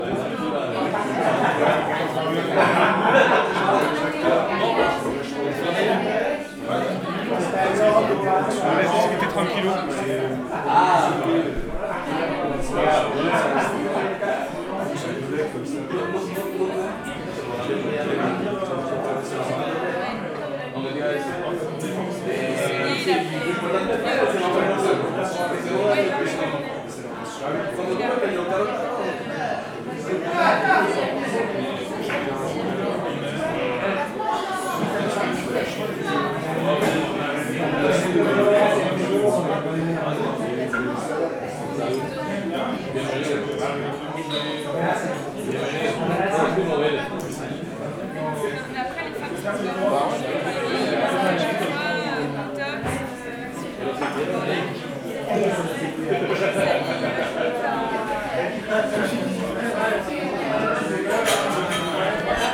Contades, Strasbourg, France - LaTaverneFrançaise
dans le bar LaTaverne à Strasbourg le 18 Mars 2014 au soir
18 March 2014